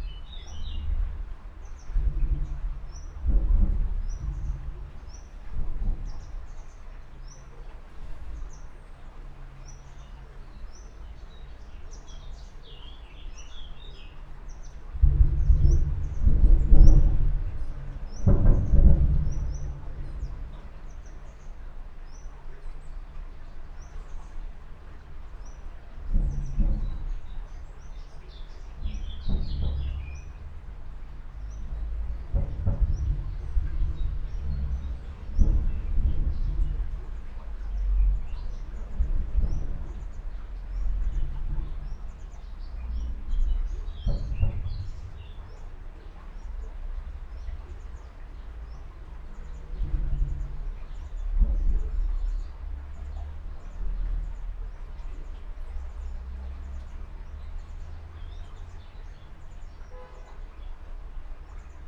Rue Léon Metz, Esch-sur-Alzette, Luxemburg - cars hitting manhole percussion
cars at the nearby junction hit a manhole cover, which can be heard in a tube, 50m away under this bridge
(Sony PCM D50, Primo EM272)
Canton Esch-sur-Alzette, Lëtzebuerg